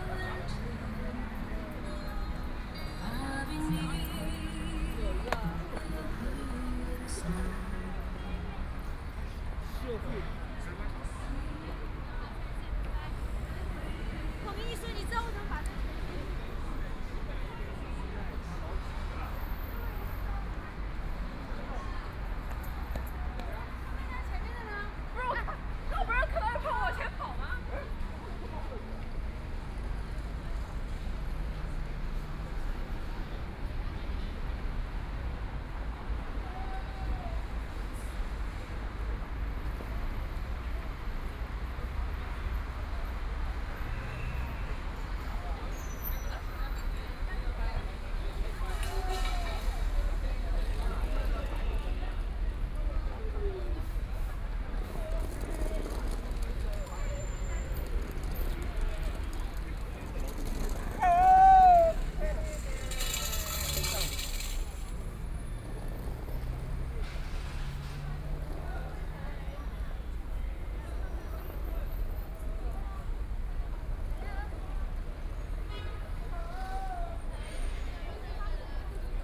Tibet Road, Huangpu District - Walking on the road
Walking in the street, Traffic Sound, Street, with moving pedestrians, Binaural recording, Zoom H6+ Soundman OKM II